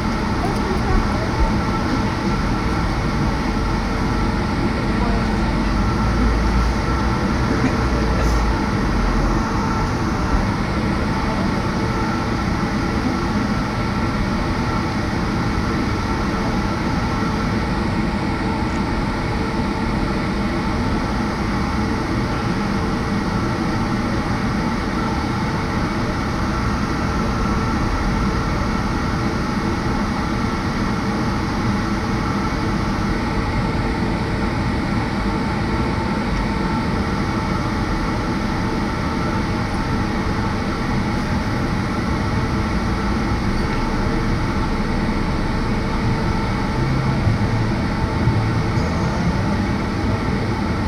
Stadtkern, Essen, Deutschland - essen, dellbrügge, ventialtion
An einem Gebäude auf dem Bürgersteig. Der Klang einer Ventilation die aus einem Rohr in der Gebäude Wand kommt. Im Hintergrund Fussgänger und einzelne Fahrzeuge.
At a building on the pavement. The sound of a ventialation coming out of the building wall. In the background passing by passengers and cars.
Projekt - Stadtklang//: Hörorte - topographic field recordings and social ambiences